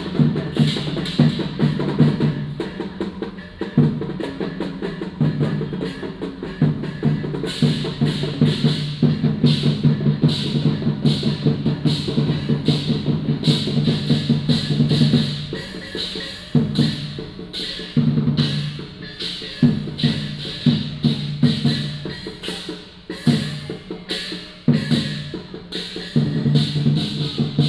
A group of students in front of the temple square